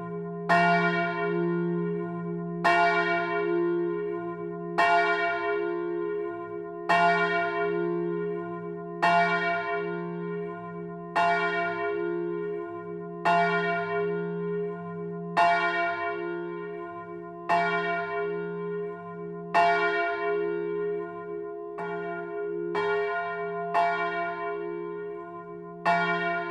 Longny-au-Perche au Perche (Orne)
Église St-Martin
volée cloche 1 (haut)